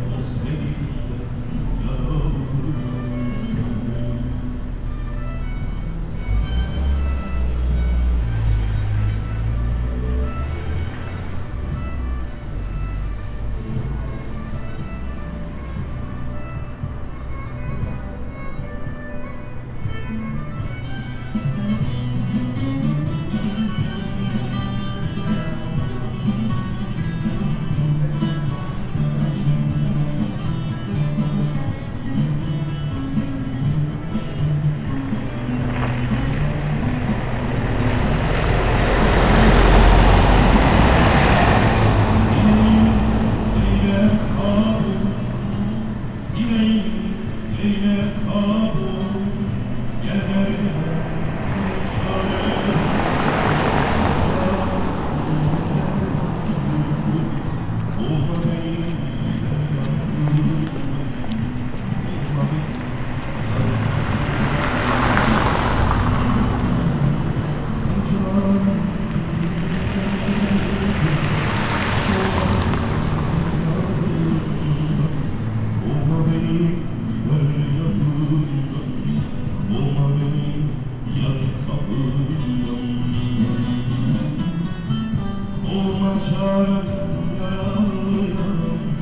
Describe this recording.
A car repair shop with open doors. And traffic passing by. Recorded using a Senheiser ME66, Edirol R-44 and Rycote suspension & windshield kit.